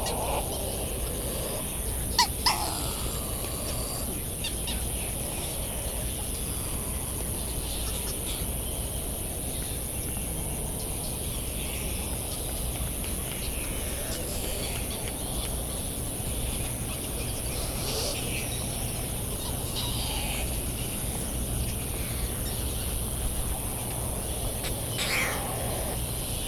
Hawaiian Islands, USA - Bonin Petrel Soundscape
Sand Island ... Midway Atoll ... On the path to the All Hands Club ... Sand Island ... Midway Atoll ... recorded in the dark ... open lavalier mics ... calls and flight calls of Bonin Petrel ... calls and bill claps from Laysan Albatross ... white tern calls ... cricket ticking away the seconds ... generators kicking in and out in the background ...
United States, March 2012